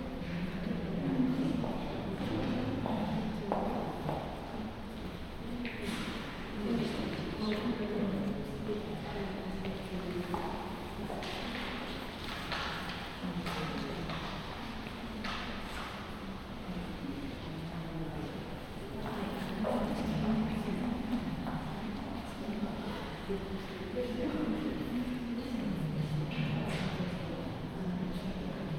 Sagatenryūji Susukinobabachō, Ukyo Ward, Kyoto, Japan - 202007051434 Fukuda Art Museum, 2F Gallery
Title: 202007051434 Fukuda Art Museum, 2F Gallery
Date: 202007051434
Recorder: Zoom F1
Microphone: Luhd PM-01Binaural
Technique: Binaural Stereo
Location: Saga-Arashiyama, Kyoto, Japan
GPS: 35.013843, 135.676228
Content: binaural, stereo, japan, arashiyama, kansai, kyoto, people, museum, fukuda, art, gallery, 2020, summer, second floor
京都府, 日本 (Japan), 5 July 2020